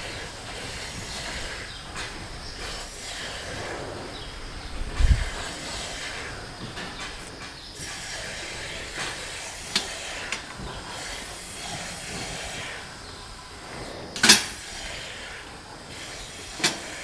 Halenfeld, Buchet, Deutschland - Kuhstall 3 / Cowshed 3
Eine Kuh säuft aus dem Wasserspender, Fressgitter klappern, Schwalben zwitschern.
A cow is drinking from the water dispenser, feed fences rattle, chirping swallows.
Buchet, Germany, Germany, 6 July, 13:20